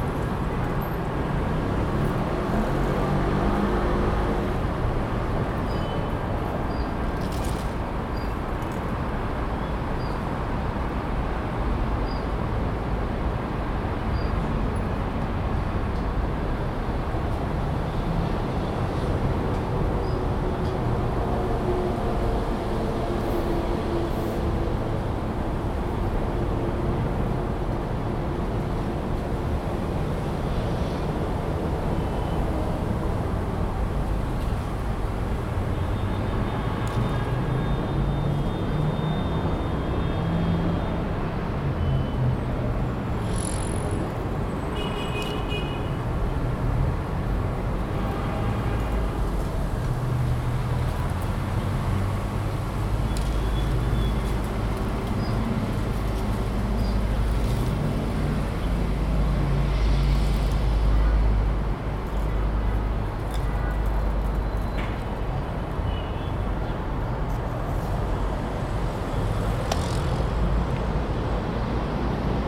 وادي قدوم 77 - Damascus Gate\Musrara
Walking from Damascus Gate to Musrara